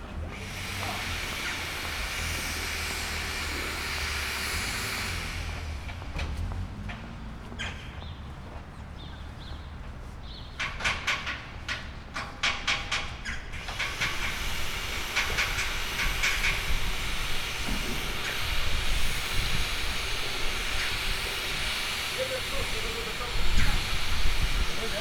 Poznan, Jana III Sobieskiego housing estate - insulation workers
construction workers during their duties on a scaffolding, putting up insulation material on the building. drilling, lifting things, hammering, power cutting, talking.